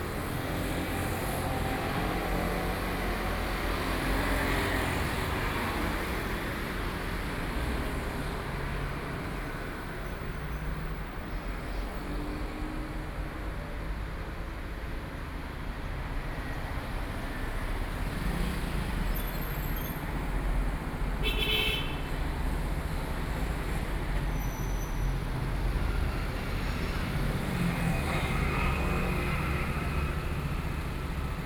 Beitou - Street corner
Street corner, Sony PCM D50 + Soundman OKM II
Beitou District, Taipei City, Taiwan